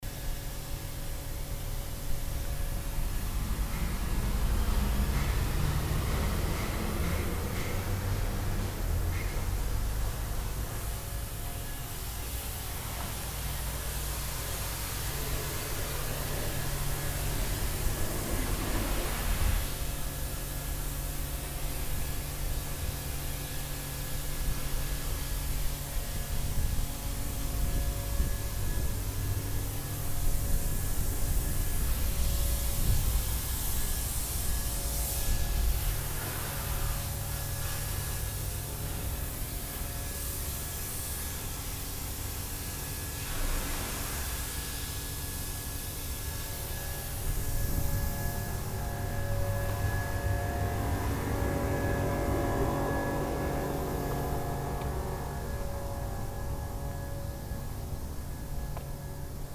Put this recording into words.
recorded june 5, 2008. - project: "hasenbrot - a private sound diary"